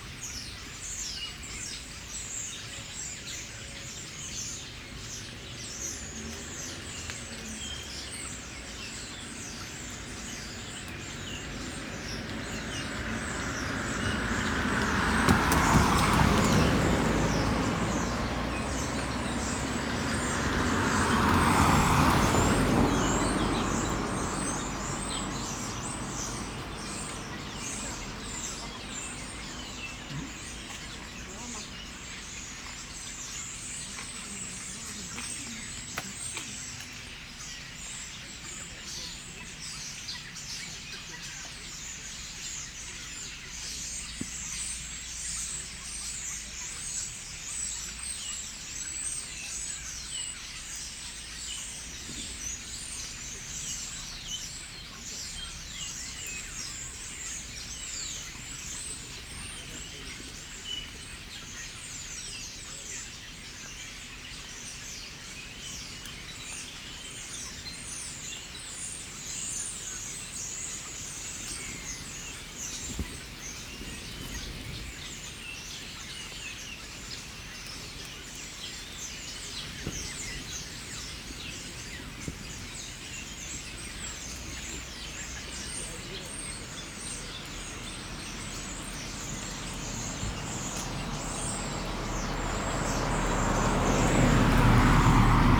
Starlings in an oak tree, Spreewald village atmosphere, Leiper Dorfstraße, Lübbenau/Spreewald, Germany - Starlings in an oak tree, quiet village, suddenly they leave
Recorded whilst cycling in the Spreewald in the last days of August. Leipe is a quiet holiday village surrounded by forest, canals. Older people walk past. The starlings chatter, at one point they go silent but resume again. Then they suddenly leave in a purr of wings. It is windy. Occasionally acorns fall.